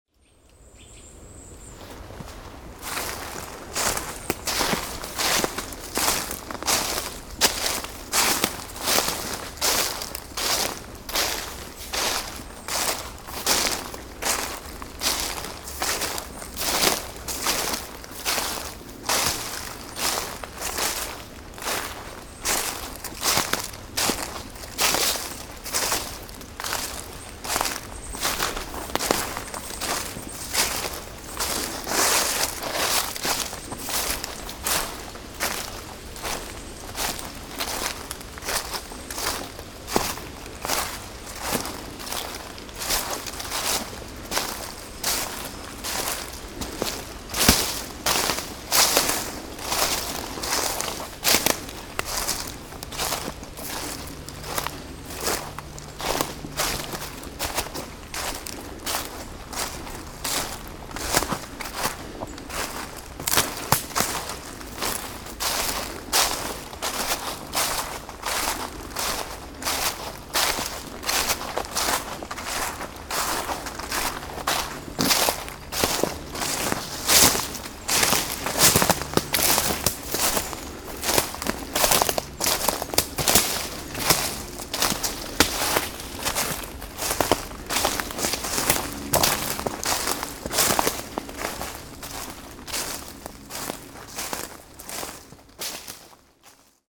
Court-St.-Étienne, Belgique - Walking in the dead leaves
Walking in the dead leaves, in a beautiful autumn forest.